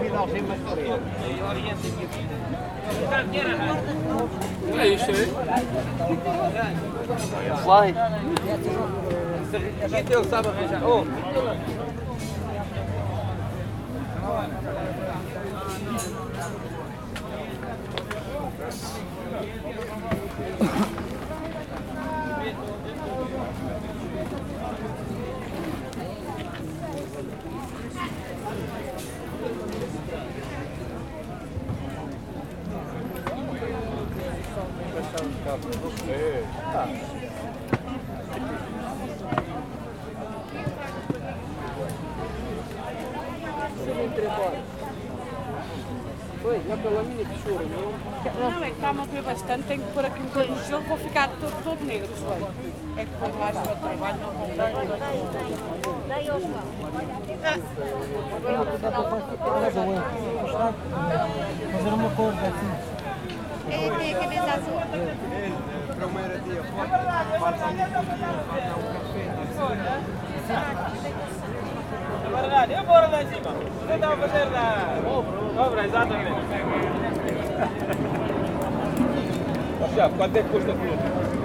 {"title": "Lisbonne, Portugal - flea market", "date": "2015-06-20 15:35:00", "description": "flea market that takes place every Tuesday and Saturday in the Campo de Santa Clara (Alfama)", "latitude": "38.72", "longitude": "-9.12", "altitude": "40", "timezone": "Europe/Lisbon"}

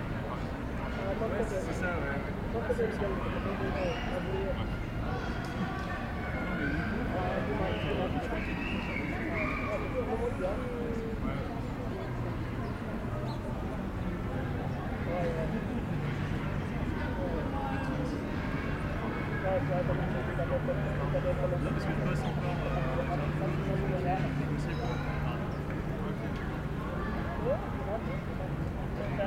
{"title": "Rue Hector Berlioz, Grenoble, France - Jardin de ville", "date": "2022-09-11 16:08:00", "description": "Par cette belle journée ensoleillée beaucoup de monde dans le jardin de Ville.", "latitude": "45.19", "longitude": "5.73", "altitude": "216", "timezone": "Europe/Paris"}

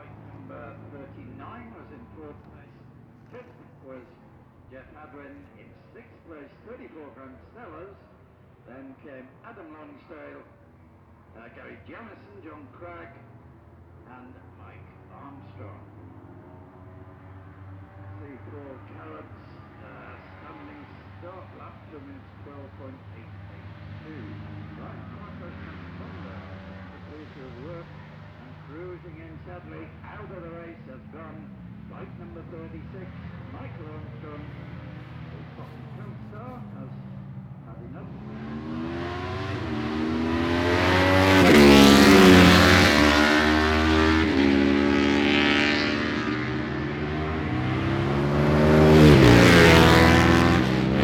{"title": "Jacksons Ln, Scarborough, UK - barry sheene classic 2009 ... race ...", "date": "2009-05-23 12:00:00", "description": "barry sheene classic 2009 ... race ... one point stereo mic to minidisk ...", "latitude": "54.27", "longitude": "-0.41", "altitude": "144", "timezone": "Europe/London"}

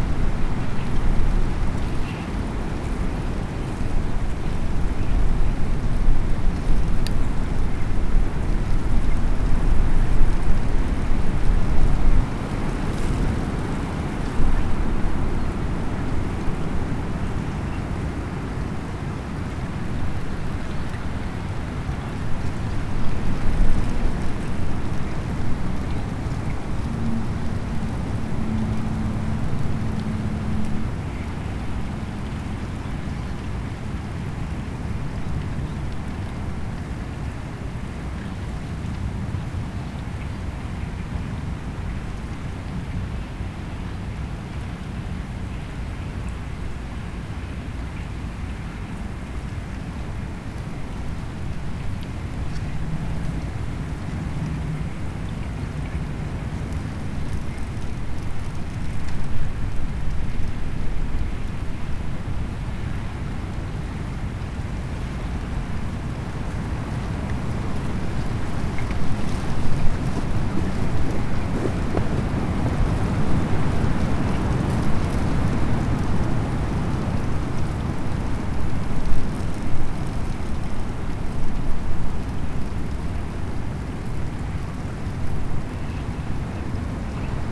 {"title": "berlin, am schildhorn: havelufer - the city, the country & me: alongside havel river", "date": "2013-03-24 16:42:00", "description": "strong wind blows small pieces of ice over the ice of a frozen cove of the havel river\nthe city, the country & me: march 24, 2013", "latitude": "52.49", "longitude": "13.20", "altitude": "34", "timezone": "Europe/Berlin"}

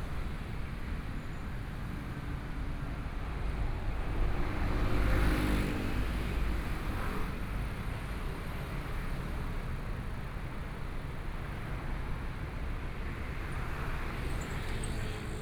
{"title": "Linsen N. Rd., Zhongshan Dist. - walking on the Road", "date": "2014-01-20 14:16:00", "description": "Walking on the road （ Linsen N. Rd.）, Traffic Sound, Binaural recordings, Zoom H4n + Soundman OKM II", "latitude": "25.06", "longitude": "121.53", "timezone": "Asia/Taipei"}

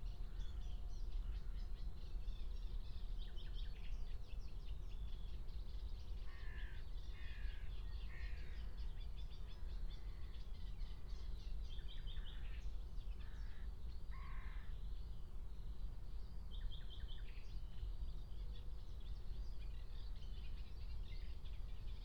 {"title": "Berlin, Tempelhofer Feld - former shooting range, ambience", "date": "2020-06-02 08:00:00", "description": "08:00 Berlin, Tempelhofer Feld", "latitude": "52.48", "longitude": "13.40", "altitude": "44", "timezone": "Europe/Berlin"}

